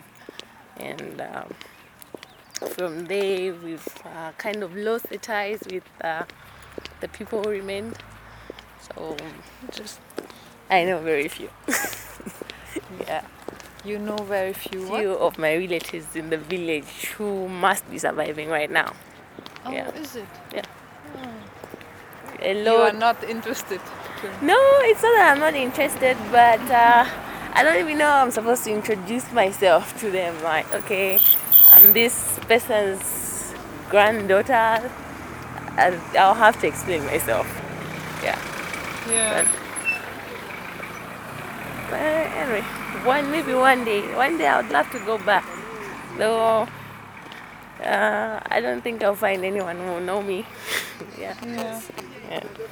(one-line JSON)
{"title": "Showgrounds, Lusaka, Zambia - We’ve lost the ties to the village…", "date": "2012-07-20 16:29:00", "description": "We are with the visual artist Mulenga Mulenga walking in the Showgrounds of Lusaka from the Visual Arts Council to the “Garden club” café to record the interview with her…\nplaylist of footage interview with Mulenga", "latitude": "-15.40", "longitude": "28.31", "altitude": "1261", "timezone": "Africa/Lusaka"}